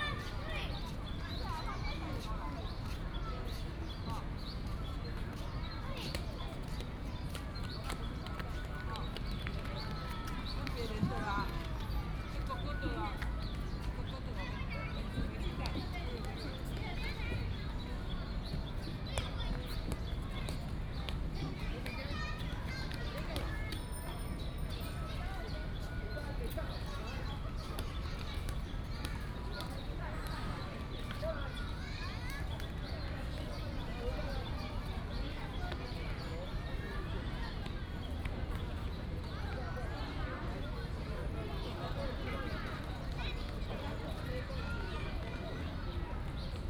板橋石雕公園, Banqiao Dist., New Taipei City - Children Playground
in the park, Children Playground, Bird calls
New Taipei City, Taiwan, 29 July, ~17:00